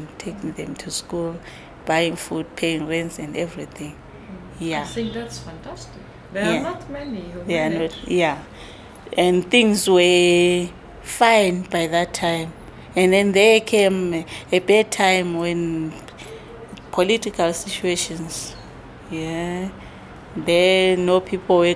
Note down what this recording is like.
With nineteen, Nonhlanhla won an award in the Anglo-American Arts Exhibition. Respect and recognition brought customers to her studio; she was one of the few women being fortunate making a living of her work. And even through difficult times, she always managed to support herself and her family through her artwork.